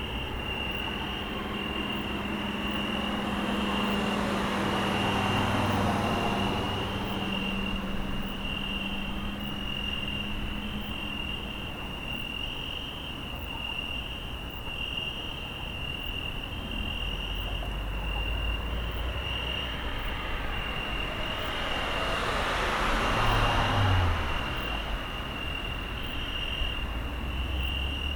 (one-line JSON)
{"title": "Bikeway close to Vienna International Centre, Subway - Crickets in Vienna (excerpt, schuettelgrat)", "date": "2004-08-22 23:00:00", "description": "Crickets, traffic noise, bicycles, siren, recorded at night. Part of \"Grillen in Wien\" (\"Crickets in Vienna\")", "latitude": "48.23", "longitude": "16.41", "altitude": "159", "timezone": "Europe/Vienna"}